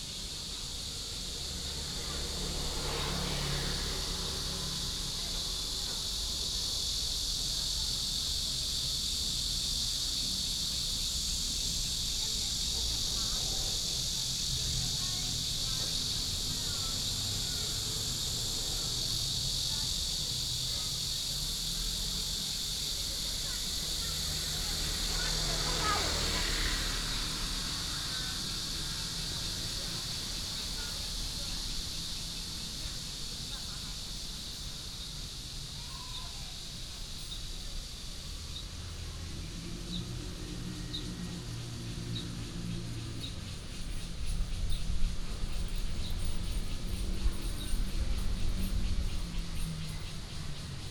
Xingfu St., Zhongli Dist. - Next to the park
Cicadas, sound of birds, Traffic sound
Taoyuan City, Taiwan, 10 July, ~18:00